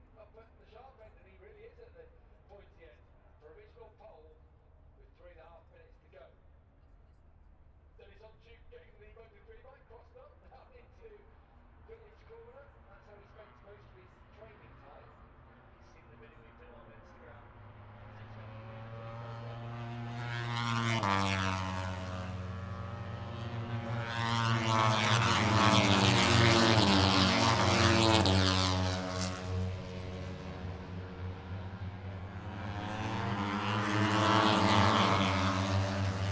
Silverstone Circuit, Towcester, UK - british motorcycle grand prix 2022 ... moto three ...
british motorcycle grand prix 2022 ... moto three qualifying two ... dpa 4060s on t bar on tripod to zoom f6 ...
England, United Kingdom, 6 August 2022, ~13:00